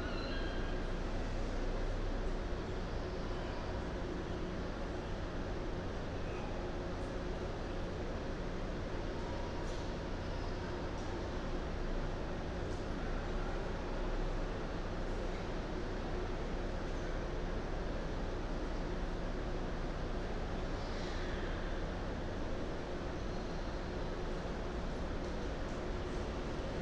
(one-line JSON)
{"title": "Brno, ÚAN Zvonařka, Brno-Brno-střed, Česko - Central station atmoshere", "date": "2022-05-14 13:21:00", "description": "Zoom H6 + 2 Earsight mics.\nBus central station and there... a beautiful atmosphere is created under the large roof. Taken at a quiet time with little traffic.", "latitude": "49.19", "longitude": "16.62", "altitude": "205", "timezone": "Europe/Prague"}